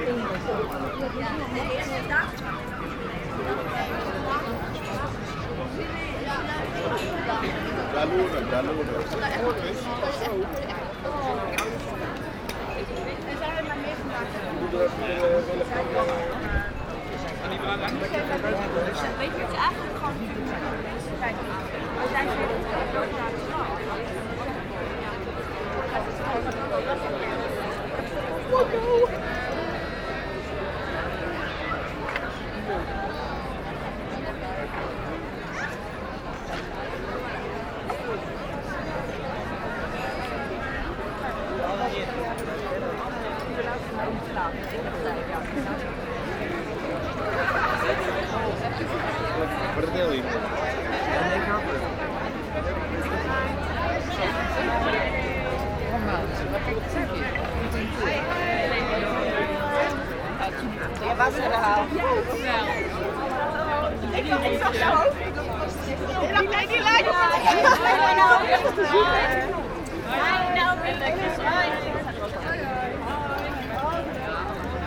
Den Haag, Nederlands - Bar terraces
Grote Markt. On a very sunny Saturday afternoon, the bar terraces are absolutely completely busy ! Happy people discussing and drinking.